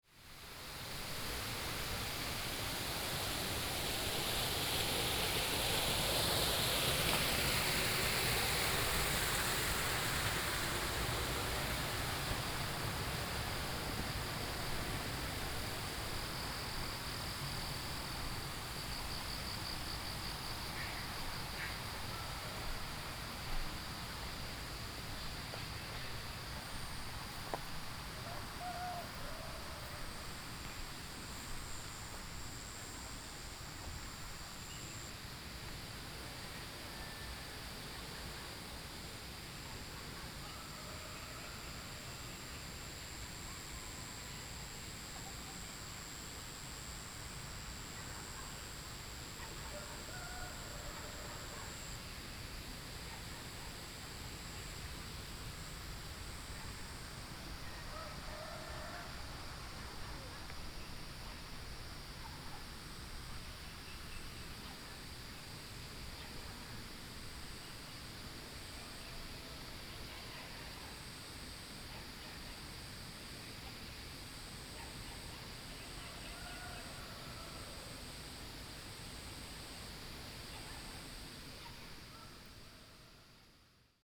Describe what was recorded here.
Early morning, sound of water streams, Dogs barking, Insect sounds